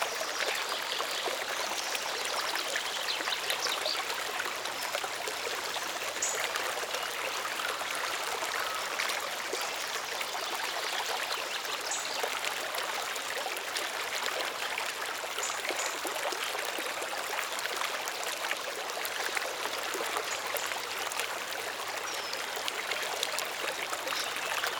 hosingen, small stream in forest
In the forest at a small stream in the morning time.
The sound of the gurgling water and the atmosphere of the dark shady forest with several bird and lush wind sounds.
Hosingen, Luxembourg, 12 September, 19:04